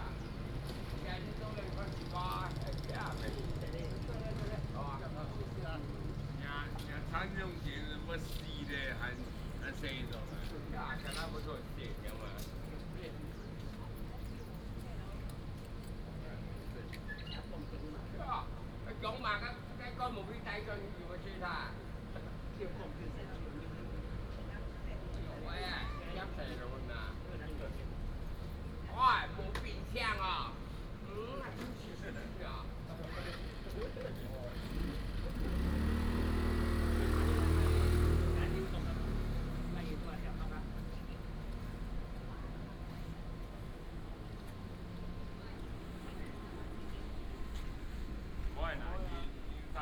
牛稠河, Guanxi Township - In the river park
traffic sound, Bird call, In the river park, Hakka people, Factory noise
14 August 2017, ~17:00